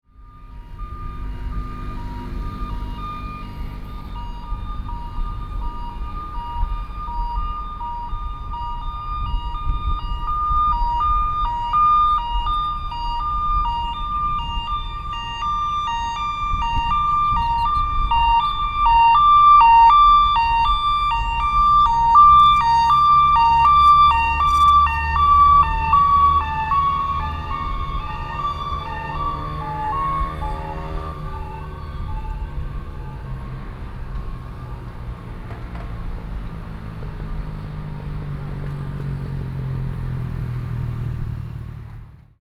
Keelung, Taiwan - Emergency ambulance
Emergency ambulance, Sony PCM D50 + Soundman OKM II
基隆市 (Keelung City), 中華民國